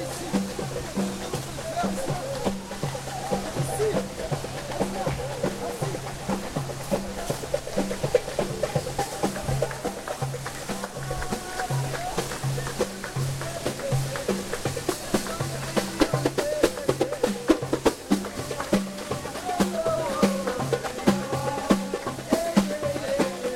Morocco: ourika valley/ Ourika Tal: waterfall and drums/ Wasserfall und Trommeln